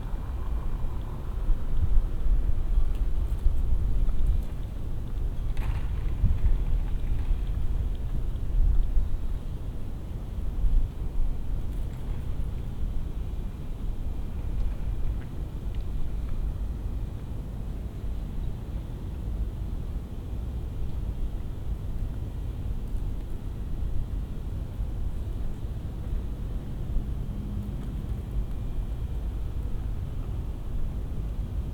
Montreal: 4500 Ch. Queen Mary - 4500 Ch. Queen Mary
equipment used: Olympus LS-10 w/ Soundman OKM II Binaural Mic
Late night recording on Queen Mary across from the Hôpital des Anciens Combattant...lots of wind and small sounds contrasted by the seldom sounds of motor vehicles.
Montreal, QC, Canada